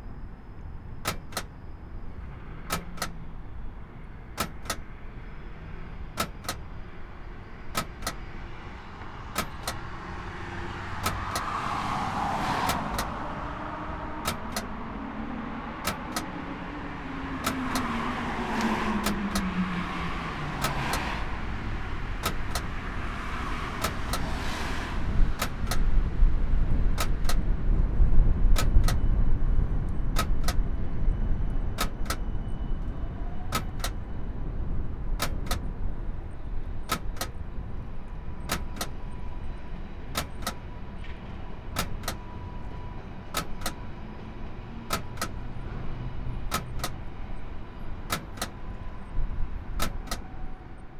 parking meter broken down. coin slot opening and closing continuously.